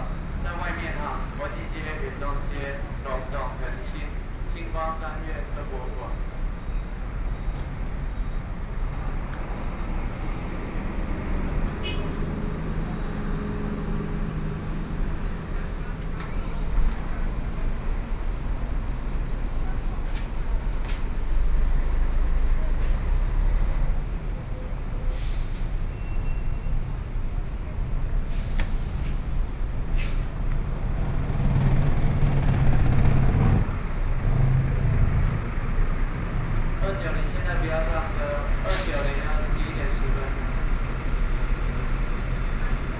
Taichung Bus Stop, Taichung - Bus stop
Public broadcasting station, Traffic Noise, Zoom H4n+ Soundman OKM II